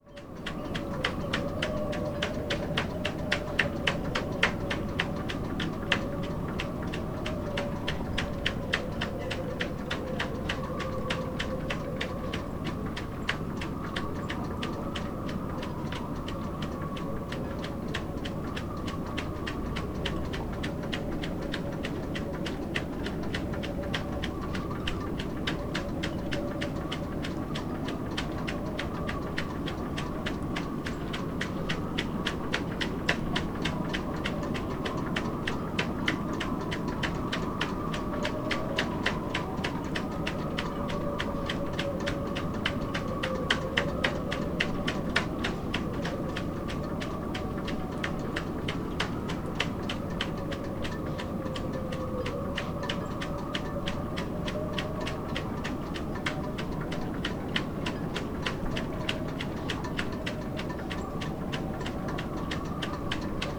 lemmer, vuurtorenweg: marina - the city, the country & me: marina berth
wind blows through sailboat masts and riggings
the city, the country & me: june 21, 2011
Lemmer, The Netherlands, 21 June 2011, ~11:00